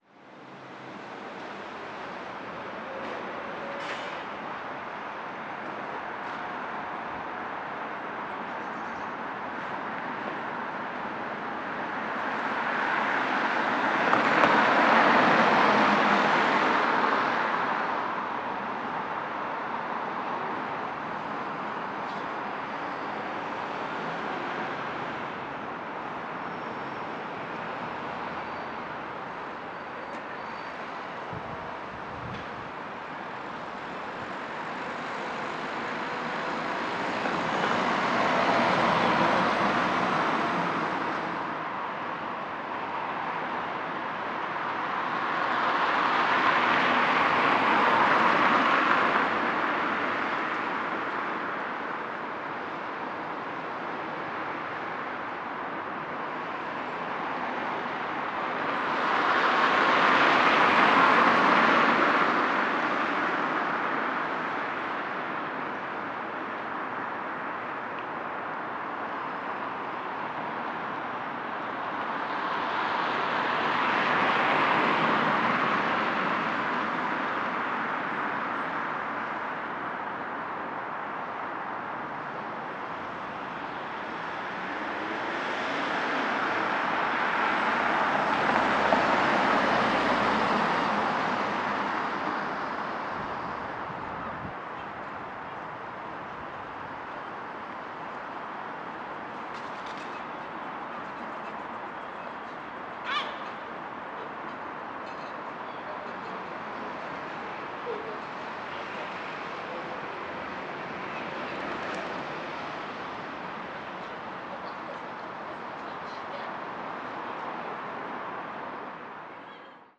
Student Housing Association, Donegall St, Belfast, UK - St Anne’s Cathedral
Recording of a few vehicles driving in the area, with distant voices and birds flying around.
County Antrim, Northern Ireland, United Kingdom, 27 March 2021, ~18:00